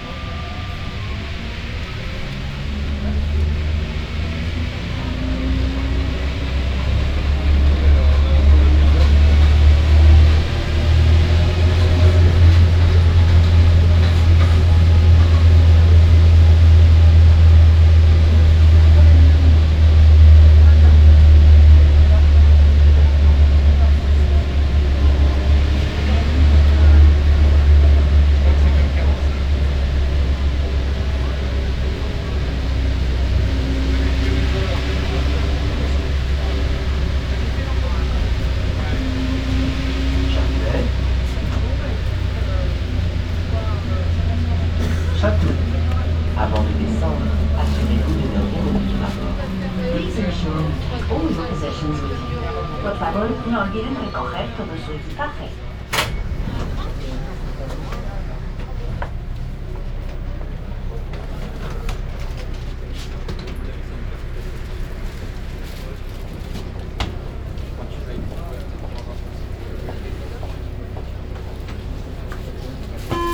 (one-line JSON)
{"title": "Paris soundwalks in the time of COVID-19 - Wednesday night metro and soundwalk in Paris in the time of COVID19: Soundwalk", "date": "2020-10-14 21:57:00", "description": "Wednesday, October 14th 2020: Paris is scarlett zone fore COVID-19 pandemic.\nOne way trip back riding the metro form Odeon to Gare du Nord and walking to airbnb flat. This evening was announced the COVID-19 curfew (9 p.m.- 6 a.m.) starting form Saturday October 17 at midnight.\nStart at 9:57 p.m. end at 10:36 p.m. duration 38’45”\nAs binaural recording is suggested headphones listening.\nBoth paths are associated with synchronized GPS track recorded in the (kmz, kml, gpx) files downloadable here:\nFor same set of recording go to:", "latitude": "48.85", "longitude": "2.34", "altitude": "45", "timezone": "Europe/Paris"}